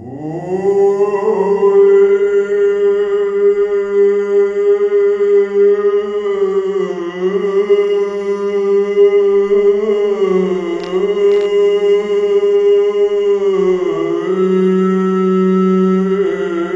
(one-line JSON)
{"title": "Kaunas, Lithuania, inside the echo sulpture", "date": "2021-08-19 11:50:00", "description": "Kaunas botanical garden. Some kind of big round empty sculpture with great echo inside...I tried to sing...", "latitude": "54.87", "longitude": "23.91", "altitude": "77", "timezone": "Europe/Vilnius"}